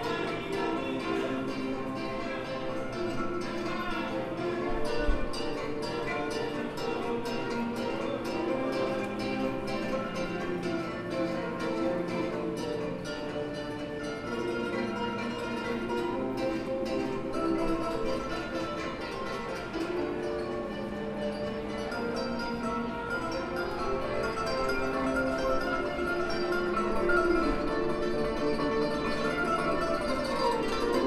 Union Square, New York, Subway Station
Béla NYC Diary, two Afro-American musicians playing in between the stairways.